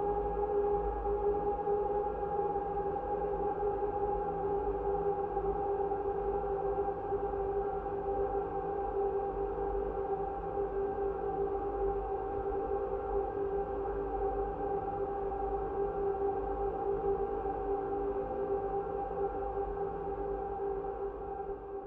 East Austin, Austin, TX, USA - Baseball Scoreboard Drone
Recorded with a pair of JfR c-series contact mics and a Marantz PMD661